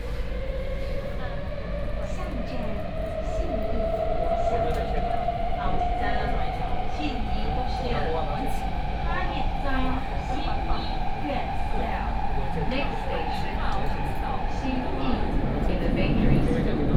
Orange Line (KMRT), 苓雅區 Kaohsiung City - Take the MRT
Take the MRT, The Orange Line is an East-West line of the Kaohsiung Mass Rapid Transit in Kaohsiung